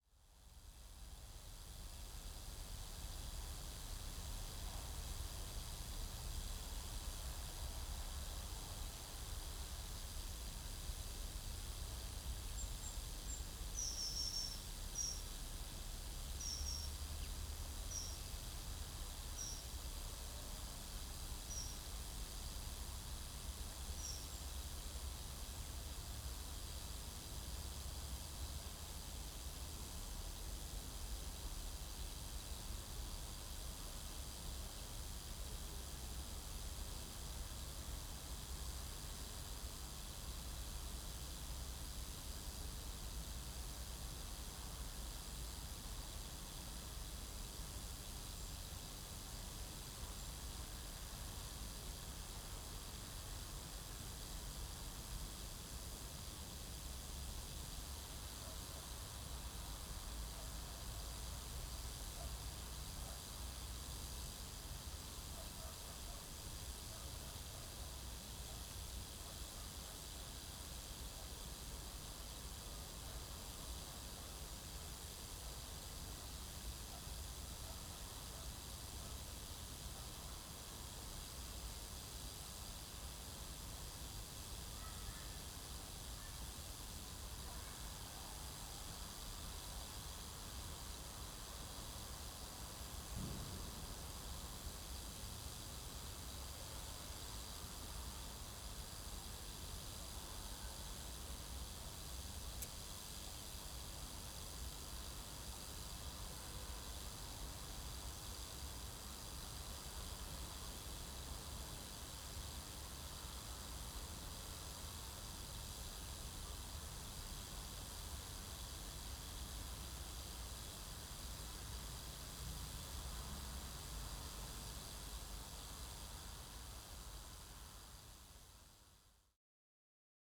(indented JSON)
{
  "title": "Lokvica, Miren, Slovenia - Buzz from power line",
  "date": "2020-12-19 16:34:00",
  "description": "Buzz from power line in a foggy afternoon. Recorded with Sennheiser ME66.",
  "latitude": "45.88",
  "longitude": "13.60",
  "altitude": "230",
  "timezone": "Europe/Ljubljana"
}